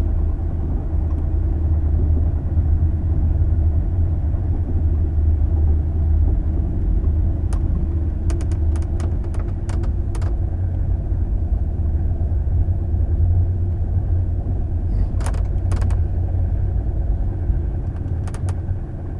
Friedland, Germany - Night train Hamburg Munich (in couchette)
In couchette, noise from moving furniture
Capturé de la couchette du train de nuit
Bad Hofgastein, Austria, 10 February 2018